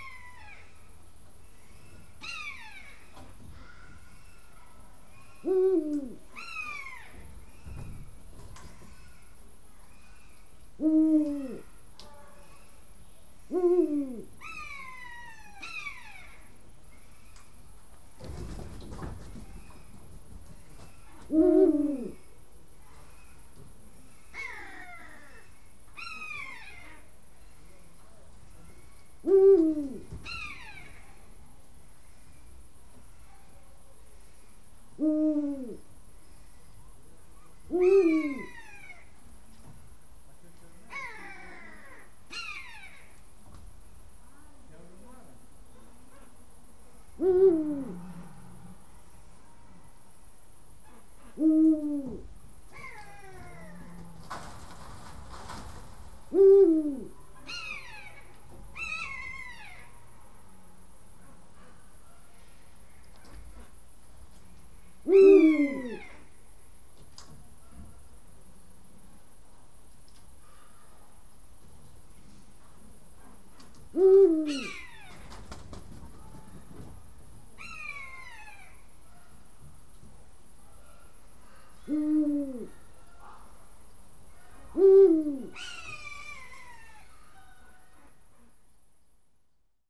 Gruta, Lithuania
little zoo's inhabitants, eagle-pwl
11 September 2015, 19:40